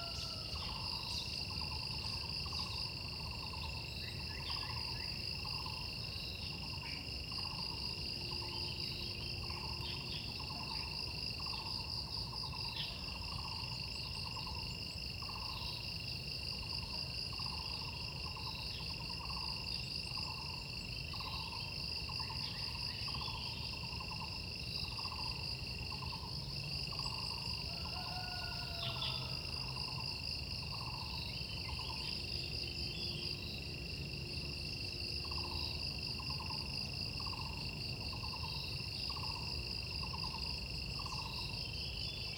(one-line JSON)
{"title": "中路坑, 桃米里 - Sound of insects and birds", "date": "2016-05-06 07:21:00", "description": "In the woods, Sound of insects, Bird sounds\nZoom H2n MS+XY", "latitude": "23.95", "longitude": "120.92", "altitude": "590", "timezone": "Asia/Taipei"}